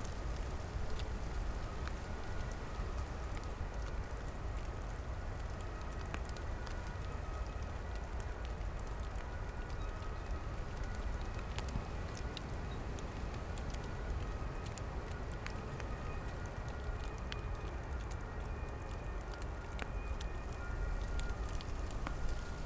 Tempelhofer Feld, Berlin, Deutschland - windy day at the poplars
an windy autumn day on the Tempelhof airfield, at the group of poplar trees.
(SD702, DPA4060)
18 November 2015, 13:25